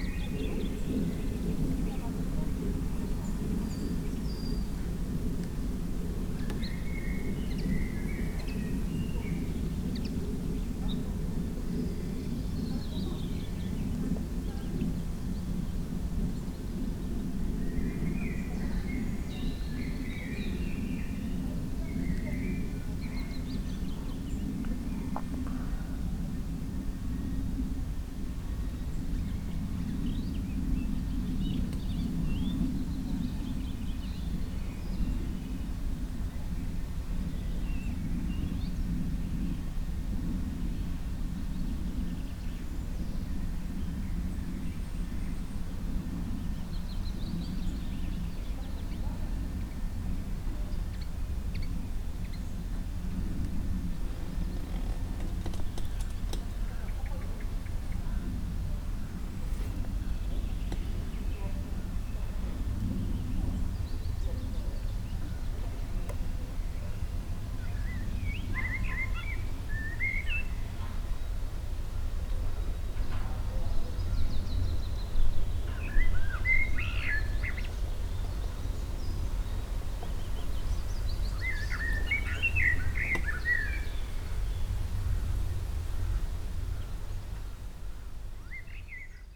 ambience in the yard captured by recorder on the table. serene atmosphere of sunny summer afternoon. birds chirping on the trees around and in the distant forest. neighbor drilling with his tools. insects buzzing. rustle of a newspaper on the table. picking up a cup and fruits from the table. plane roar exactely every three minutes appart. clang of the chair body when adjusting. (roland r-07)